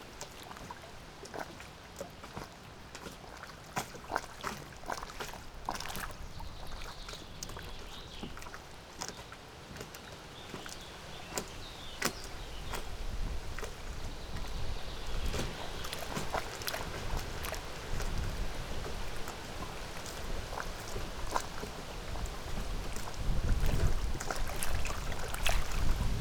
Strzeszynskie Lake, Poznan suburbia - boats in the wind
small, fiberglass boats thumping in the wind at makeshift piers. (sony d50)
Poznań-Jeżyce, Poland, June 2016